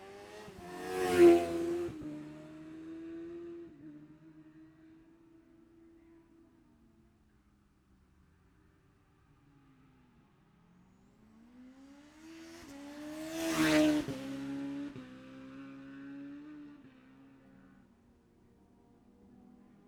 Jacksons Ln, Scarborough, UK - Gold Cup 2020 ...
Gold Cup 2020 ... Classic Superbike practice ... Memorial Out ... dpa 4060s to Zoom H5 clipped to bag ...
11 September, 11:07